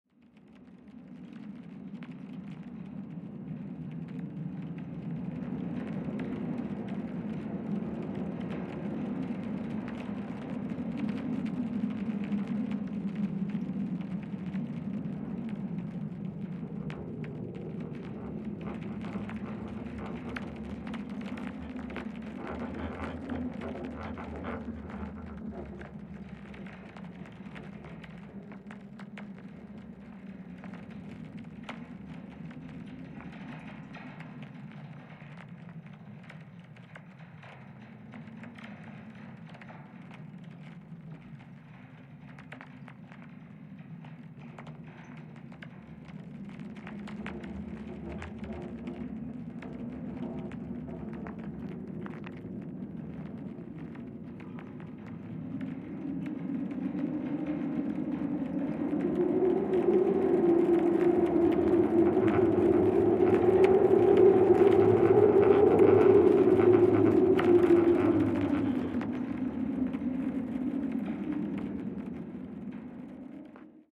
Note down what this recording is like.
A stormy night at Taubanesentralen, which use to serve as the central machine hub of the transport wires carrying coal from the surrounding mines. Recorded with contact mics.